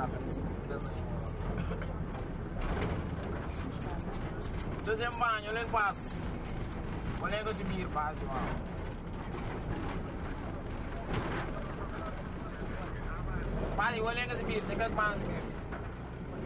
Gare Routiere Petersen, Dakar, Sénégal - PETERSEN
The Pétersen bus station receives more than 50,000 people every day. Located in the heart of the city of Dakar, its main function is to ensure the mobility of people between downtown Dakar and its suburbs. The "Ndiaga Ndiaye": cars dating back several years are the first choice of the people who live in the suburbs . And to quickly fill your bus, you have to strain your vocal cords by shouting ... often too loud!